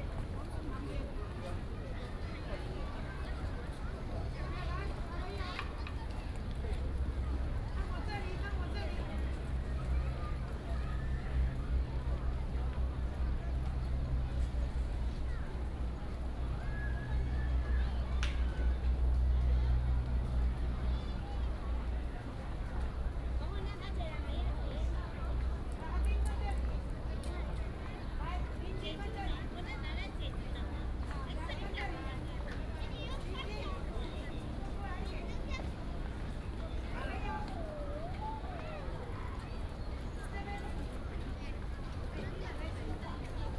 Bitan, Xindian Dist., New Taipei City - Holiday and Visitor
Holiday and Visitor
Xindian District, New Taipei City, Taiwan, 25 July 2015